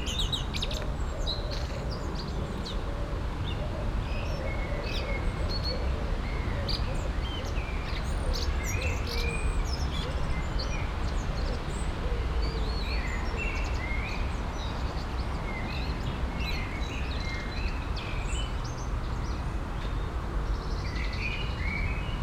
Düsseldorf, saarwerden street, garden - düsseldorf, saarwerden street, garden
inside a back house garden in the warm, mellow windy evening. a blackbird singing in the early spring.
soundmap nrw - social ambiences and topographic field recordings